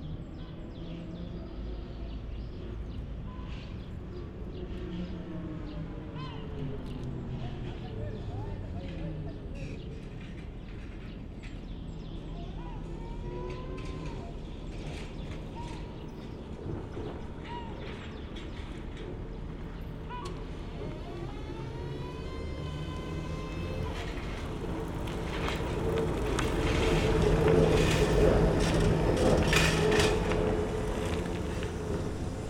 Tallinn, Kopli, Marine Academy
tram line 1+2 u-turn here. spring sunday morning ambience in front of marine academy. sounds of the harbour in the background